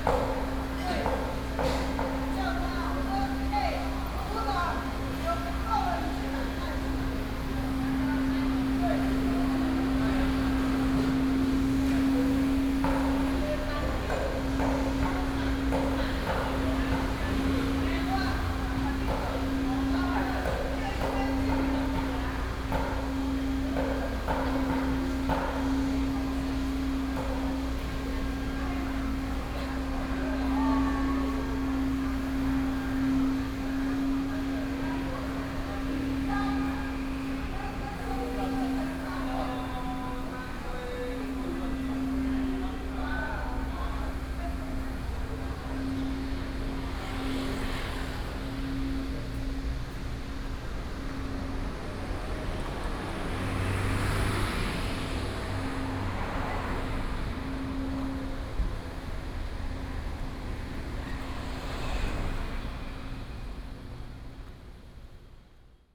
仁愛黃昏市場, Luzhu Dist., Taoyuan City - Traditional evening market
Traditional evening market, traffic sound